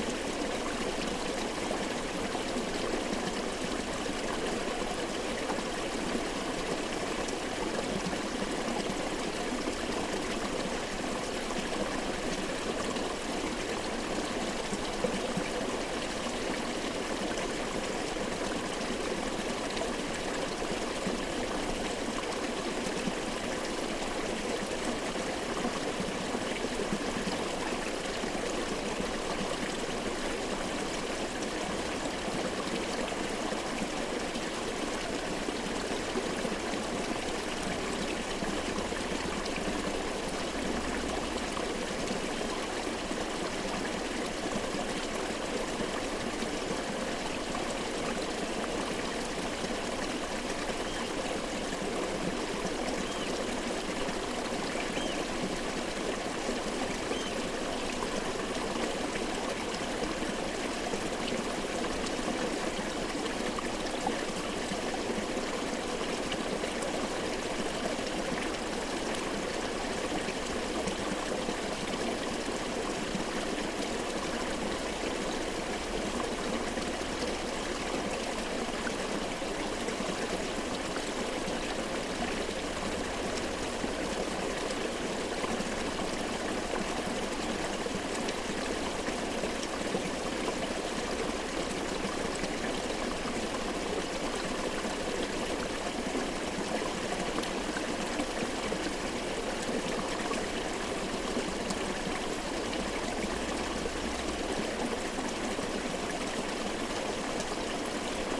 This is the sound of the small burn that runs past the restored Watermill near the Croft House Museum. In Shetland many people at one time had access to a small watermill, where they could grind down grains using the power of the water in the vicinity. Water was diverted into the mill via a series of stone waterways, and diverted away again when not in use, in order to preserve the paddles inside. There is an old Shetland superstition which involved throwing a ball of yarn into an old watermill on Halloween in order to hear the voice of one's future husband - [taken from the Tobar an Dualchais site: On Halloween a girl would take a ball of wirsit [worsted yarn] to an old watermill and throw it down the lum [chimney]. She would wind the ball back up and as it reached the end she would ask, "Wha haad's my clew [ball of wool] end?" Then she would hear the voice of her future husband speaking.]
Shetland Islands, UK, 1 August 2013, ~13:00